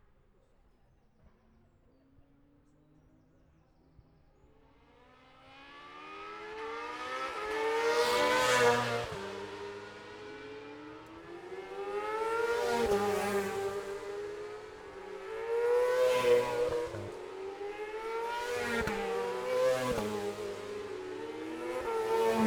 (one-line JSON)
{"title": "Jacksons Ln, Scarborough, UK - olivers mount road racing ... 2021 ...", "date": "2021-05-22 10:50:00", "description": "bob smith spring cup ... F2 sidecars practice ... dpa 4060s to MixPre3 ...", "latitude": "54.27", "longitude": "-0.41", "altitude": "144", "timezone": "Europe/London"}